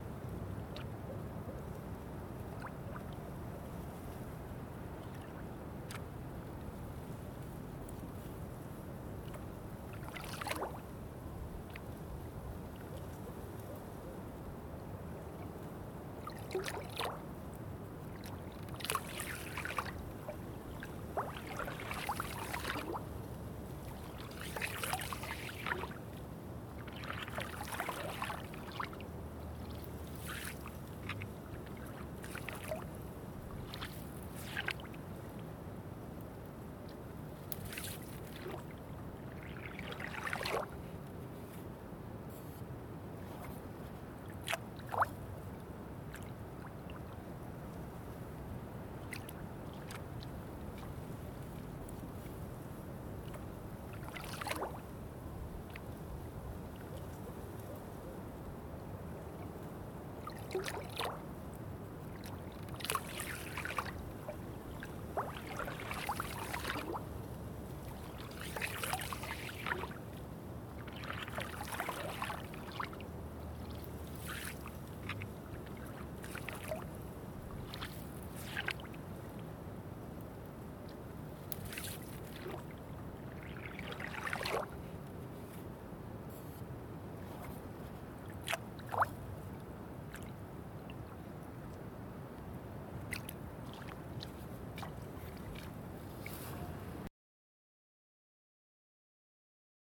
Foz do Sousa, Portugal Mapa Sonoro do rio Douro. Sousas estuary, Portugal. Douro River Sound Map
Foz do Sousa, Portugal